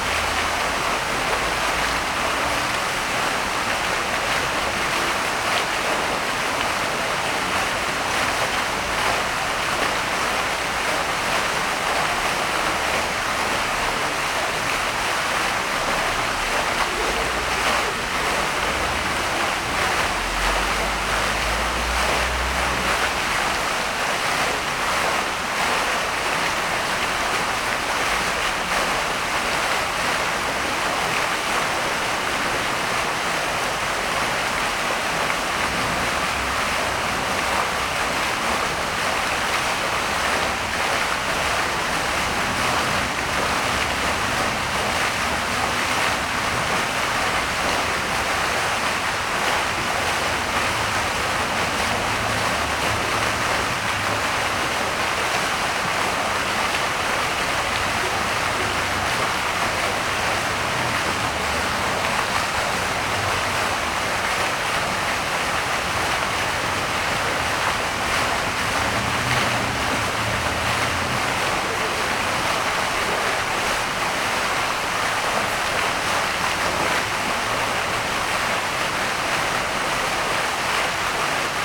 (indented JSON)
{
  "title": "Bassin square Fleuriot de l'Angle",
  "date": "2011-03-26 14:11:00",
  "description": "Square Fleuriot de lAngle à Nantes ( 44 - France )\nBassin jet vertical",
  "latitude": "47.21",
  "longitude": "-1.56",
  "altitude": "15",
  "timezone": "Europe/Paris"
}